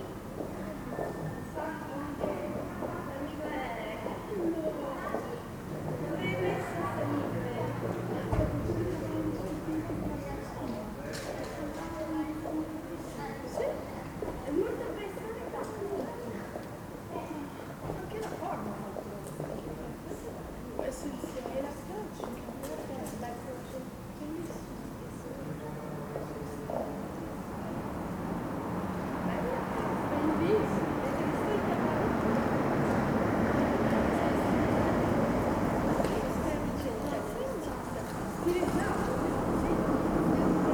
San Michele Church, Pavia, Italy - 02 - October, Sunday 8PM, dusk, 18C, small groups of people passing by
Same day as before, some hours later. Comfortable evening and nice climate to walk around. Few people passing by, some stopping and admiring the monument.
21 October, 20:10